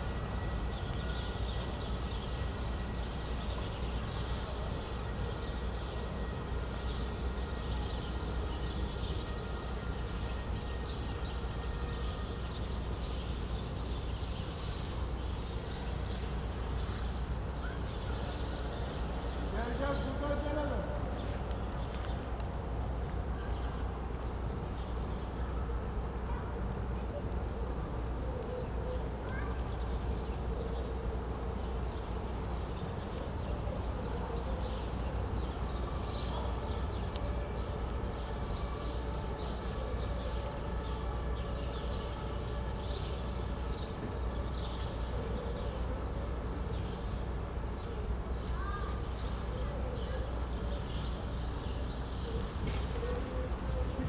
Prinzenstraße, Berlin, Germany - Large open space; damp amongst the apartment blocks
This Hinterhof is a huge open space amongst the apartment buildings. Not much is happening except a car motor is continuously idling. It blends indistinguishably into the general city roar. Magpies occasionally chatter and a man shouts in the distance. Towards the end Turkish music plays from the car, but is also lost in the roar. The light is fading and the rain might start again.
17 November 2020, ~16:00